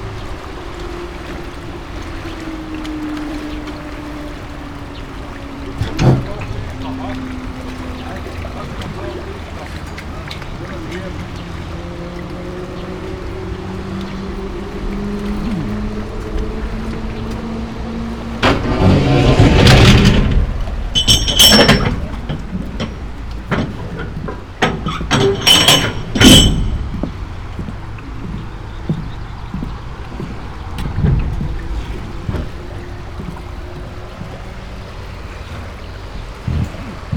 crossing the river Mura with wooden raft, which is attached to the metal rope, raft moves with flow of the river